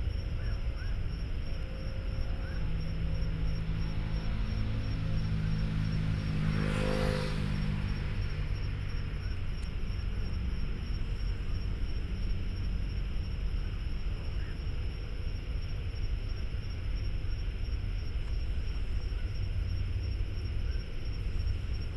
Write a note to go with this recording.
Traffic Sound, Environmental sounds, Birdsong, Frogs, Binaural recordings